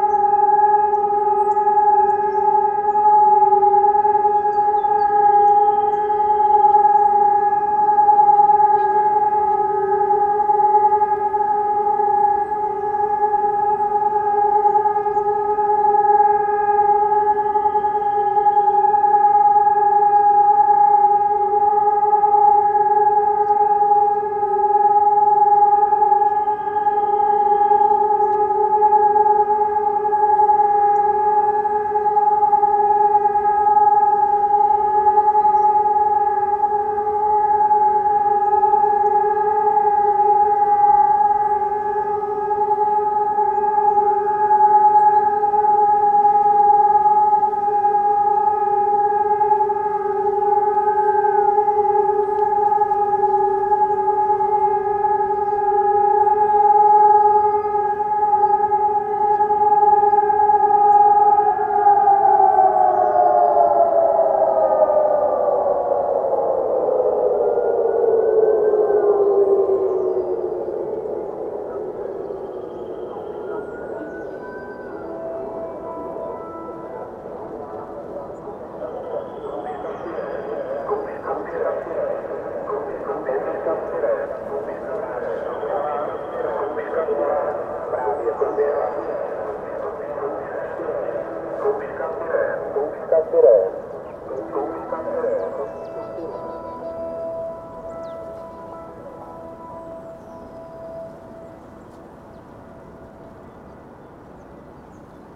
Kimského Zahrada, Praha - Siren test in Prague
Each first Wednesday of the month, at 12h00, they do a siren test in the whole city of Prague.
Recorded here from a parc, on a hill, in Prague 5.
Recorded by a MS Setup CCM41 + CCM8 Schoeps
On a Sound Devices Mixpre6
GPS: 50.077172,14.404637
Recorded during a residency at Agosto Foundation